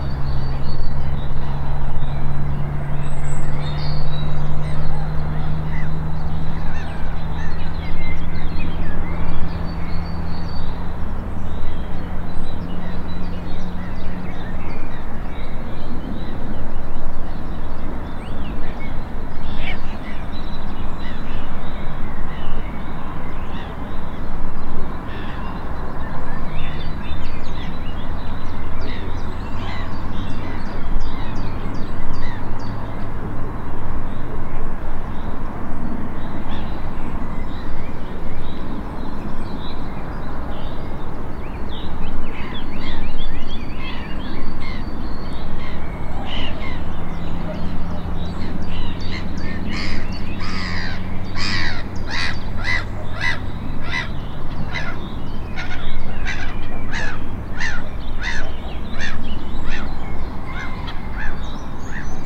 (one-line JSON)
{"title": "Mythology Park pond, Zator, Poland - (756 XY) Birds at the pond", "date": "2021-04-18 17:25:00", "description": "Stereo recording of mostly black-headed gulls at Mythology park pond.\nRecorded with Rode NT4 on Sound Devices MixPre 6 II.", "latitude": "49.99", "longitude": "19.44", "altitude": "225", "timezone": "Europe/Warsaw"}